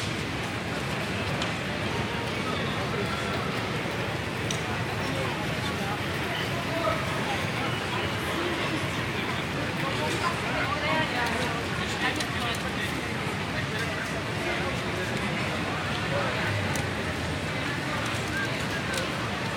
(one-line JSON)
{"title": "Vertrekpassage, Schiphol, Nederländerna - Schipol Plaza ambience", "date": "2018-03-26 11:39:00", "description": "Recorded ambience at the Schipol airport while waiting for my airplane to be ready for takeoff. This is from the big hall in the airport where you also can connect to the underground trains.", "latitude": "52.31", "longitude": "4.76", "altitude": "6", "timezone": "Europe/Amsterdam"}